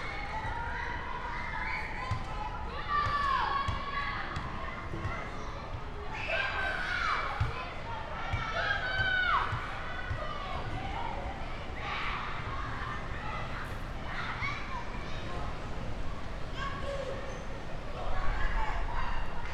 Brillschoul, Rue Zénon Bernard, Esch-sur-Alzette, Luxemburg - schoolyard ambience
Schoolyard of Brillschoul, break, early afternoon
(Sony PCM D50, Primo EM272)
Canton Esch-sur-Alzette, Lëtzebuerg, 11 May, 13:35